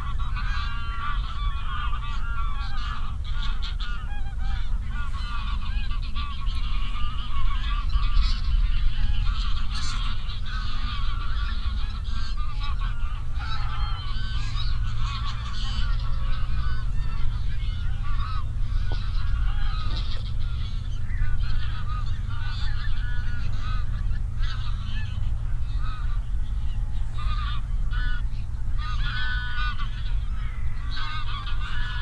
kekerdom, wild gooses
At a harvested field close o the dam. A large group of wild gooses, gathering and flying away.
international ambiences and topographic field recordings